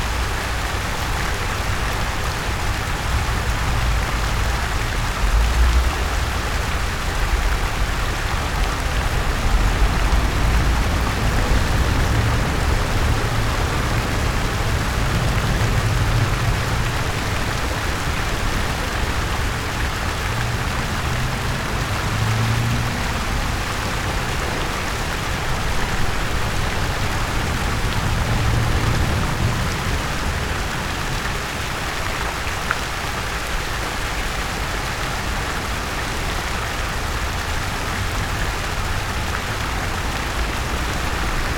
Brussels, Quai à la Houille, the fountain